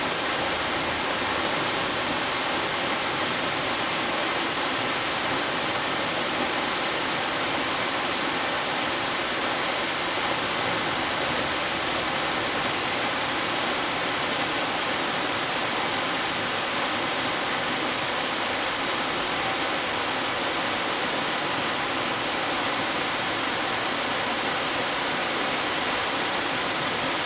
眉溪, 埔里鎮蜈蚣里 - Stream and waterfall
stream, waterfall
Zoom H2n MS+ XY
Nantou County, Taiwan, 13 December 2016, ~13:00